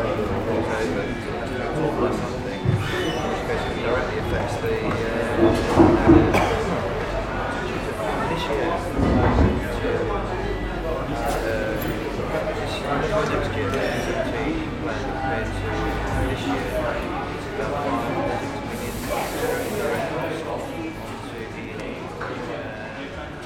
Londres, Royaume-Uni - Prêt à manger
Inside a restaurant, Zoom H6